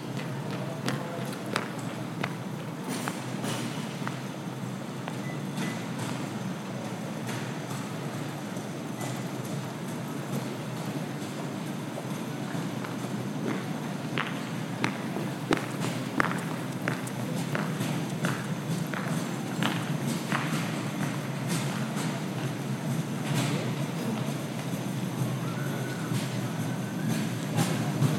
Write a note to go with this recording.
Recording of an airport ambiance. Recorded with Zoom H4